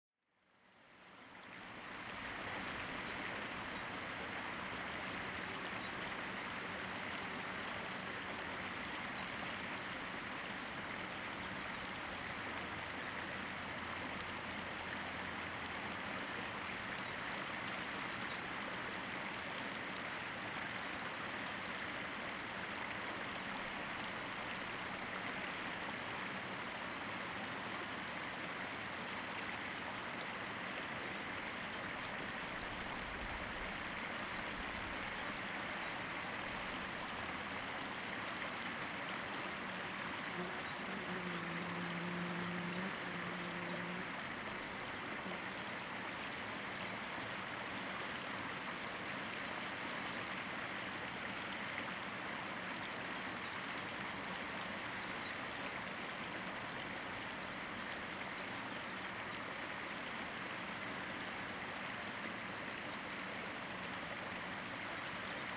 ERM fieldwork -mine water basin
water basin pumped from an oil shale mine 70+ meters below